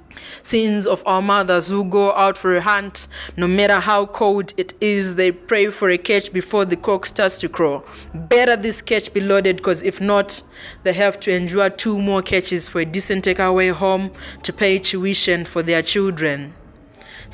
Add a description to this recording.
Linda Gabriel, “Sins of our Mothers…”, ...for these recordings, we decided to move to the large backyard office at Book Cafe. Evenings performances picked up by then and Isobel's small accountant office a little too rich of ambience .... some were broadcast in Petronella’s “Soul Tuesday” Joy FM Lusaka on 5 Dec 2012: